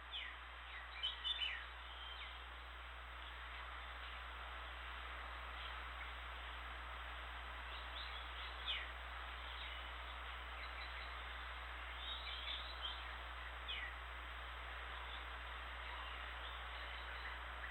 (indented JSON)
{
  "title": "Santa Marta (Distrito Turístico Cultural E Histórico), Magdalena, Colombia - Mirando la avenida escuchando el Mar",
  "date": "2011-03-17 10:05:00",
  "description": "Soundscape de cuando vivía en Santa MArta",
  "latitude": "11.17",
  "longitude": "-74.23",
  "altitude": "2",
  "timezone": "America/Bogota"
}